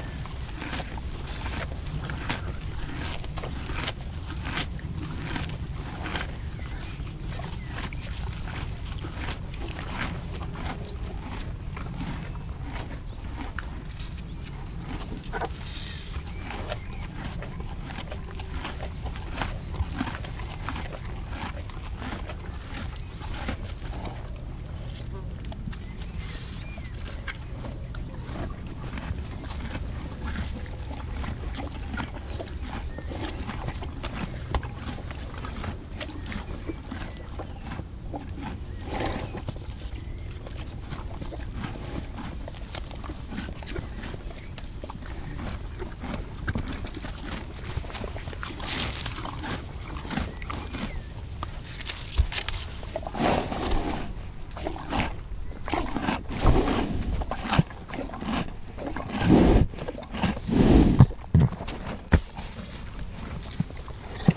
Mont-Saint-Guibert, Belgique - Horses eating
In the all-animals-eating collection, this time is the horses turn. We are here in a pleasant landscape. Horses are slowly eating grass. I come with sweet young green grass and I give it to them. A studhorse is particularly agressive, he chases the others. Regularly, this studhorse sniffs me, and looks me as an intruder. At the end, he fights another horse.